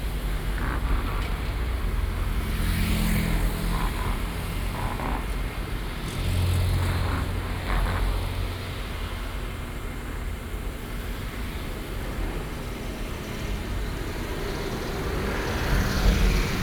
Keelung, Taiwan - Traffic noise
Traffic noise, The noise of the air conditioning cooling tank, Sony PCM D50 + Soundman OKM II